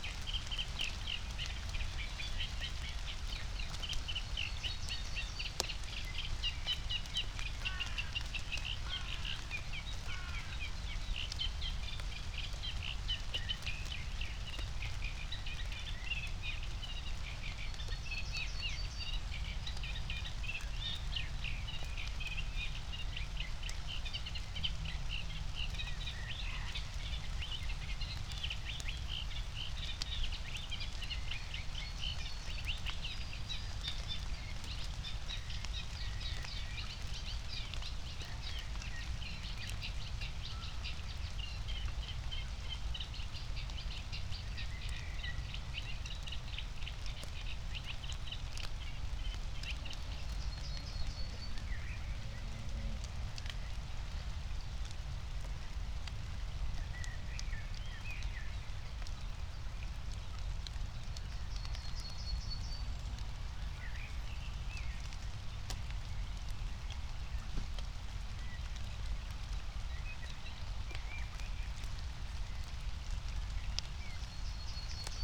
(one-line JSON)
{"date": "2021-05-15 17:18:00", "description": "it started to rain, Reed warbler (Drosselrohsänger in german) singing\n17:18 Berlin, Buch, Mittelbruch / Torfstich 1 - pond, wetland ambience", "latitude": "52.65", "longitude": "13.50", "altitude": "57", "timezone": "Europe/Berlin"}